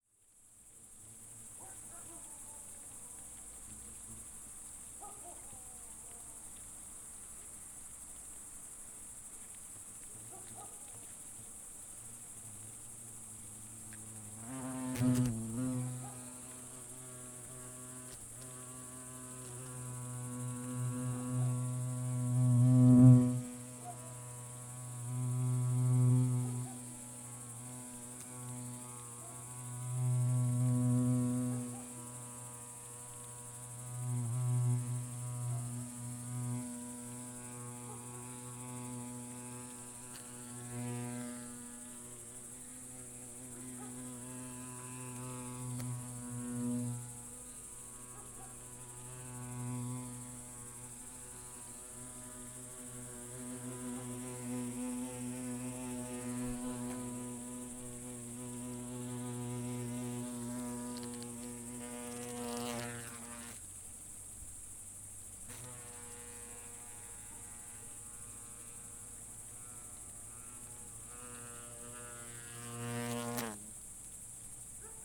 wasp nest activity at night, South Estonia
mics close to wasps building a nest
Ahja, Põlva County, Estonia, 25 July 2011